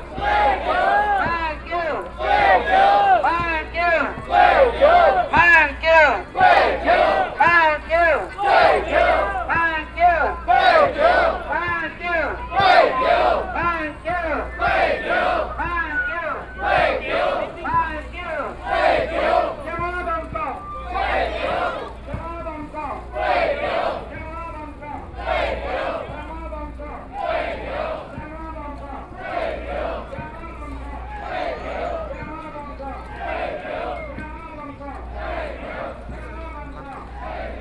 Cries of protest, Binaural recordings, Sony PCM D50 + Soundman OKM II